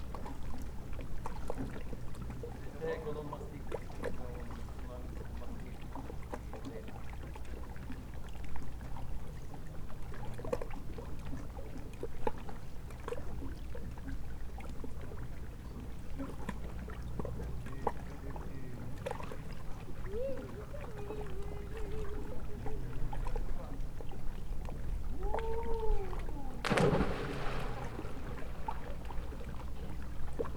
Asker, Norway, on a bridge